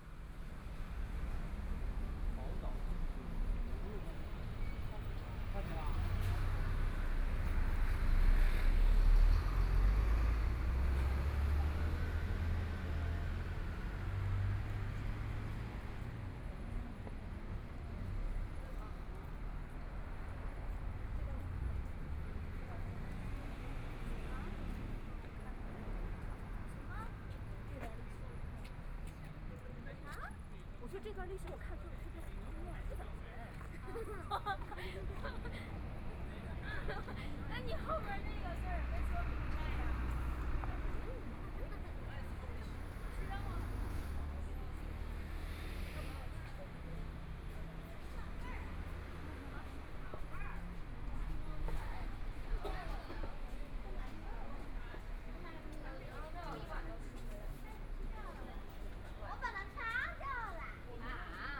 Walking across the different streets, From the pedestrian street with tourists, Traffic Sound, Motorcycle sound
Binaural recordings, ( Proposal to turn up the volume )
Zoom H4n+ Soundman OKM II
15 February 2014, 17:23